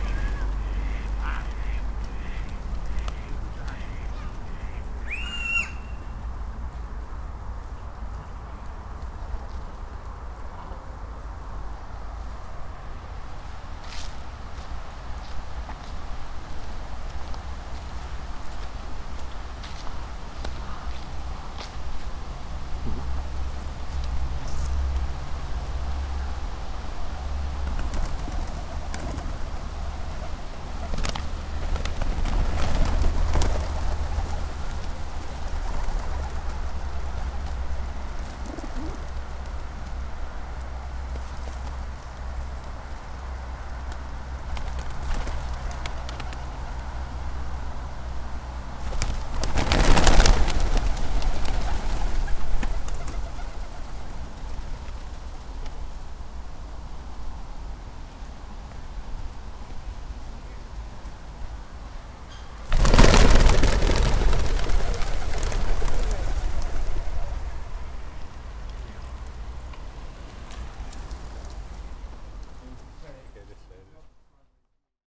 {"title": "Hartley Wintney, Hampshire, UK - Doves fluttering", "date": "2010-10-21 15:00:00", "description": "This recording features a little girl in pink wellies terrorising the doves, followed by me slowly entering into their midst (it was a really big flock) to listen to the beautiful flutterings of their wings. We were right beside a big duck pond and for some reason they suddenly all got spooked, and - as one - lifted off the ground in a huge, wing-beating cloud of birdiness. That is what you can hear in the recording.", "latitude": "51.31", "longitude": "-0.90", "altitude": "65", "timezone": "Europe/London"}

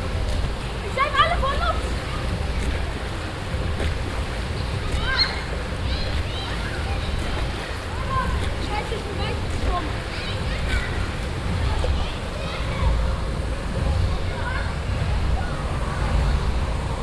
{"title": "refrath, saaler mühle, wellenbad - refrath, saaler mühle, mediterana, wellenbad", "description": "soundmap: refrath/ nrw\nrefrath, mediterana - wellenbad und pumpanlage, letzte badgeräusche vor dem umbau der alten hallenanlage im juli 2008\nproject: social ambiences/ listen to the people - in & outdoor nearfield recordings", "latitude": "50.97", "longitude": "7.14", "altitude": "93", "timezone": "GMT+1"}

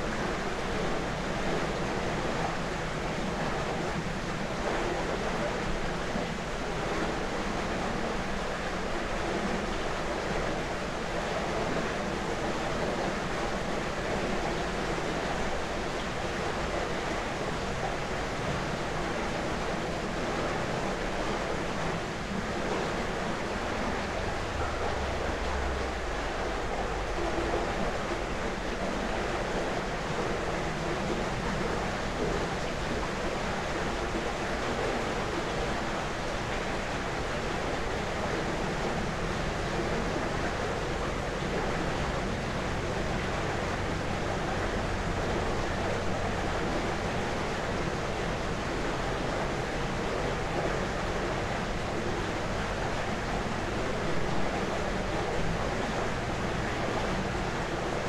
{"title": "Kelmė, Lithuania, at watermills dam - Kelmė, Lithuania, former watermills dam", "date": "2019-07-23 13:30:00", "description": "microphones on boards covering dam", "latitude": "55.63", "longitude": "22.94", "altitude": "114", "timezone": "Europe/Vilnius"}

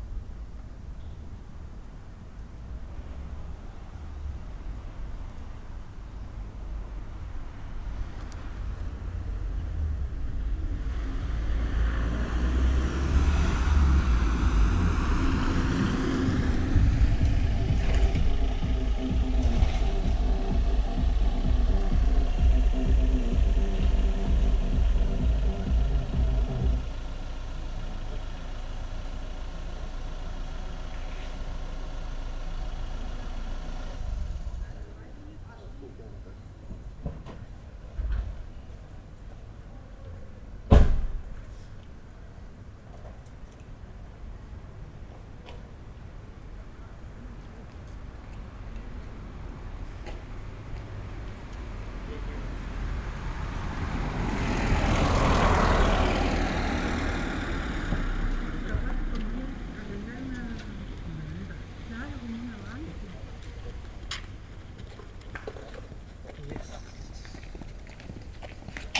{"title": "Bristol, City of Bristol, UK - Chandos Road", "date": "2014-07-21 22:45:00", "description": "Standing on the corner of the street. Cars, bike, van, pedestrians. Recorded on Marantz 660 and 2 condenser mics.", "latitude": "51.47", "longitude": "-2.60", "altitude": "58", "timezone": "Europe/London"}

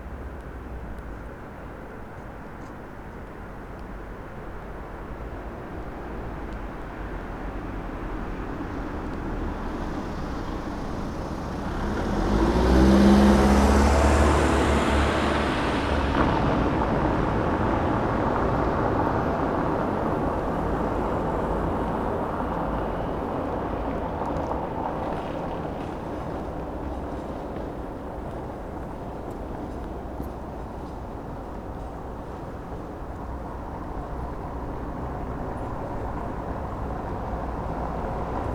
{"title": "Berlin: Vermessungspunkt Maybachufer / Bürknerstraße - Klangvermessung Kreuzkölln ::: 12.01.2012 ::: 01:22", "date": "2012-01-12 01:22:00", "latitude": "52.49", "longitude": "13.43", "altitude": "39", "timezone": "Europe/Berlin"}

